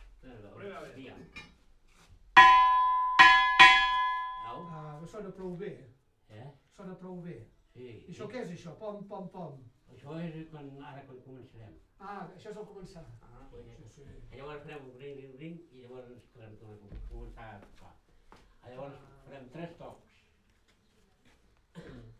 SBG, Iglesia - El Campaner de St. Bartomeu
Josep 'Pepet' Baulenas, campaner de Sant Bartomeu durante casi cincuenta años, regresa al campanario para tratar de reproducir algunos de los repiques tradicionales.
St Bartomeu del Grau, Spain, 1 August 2011, 10:00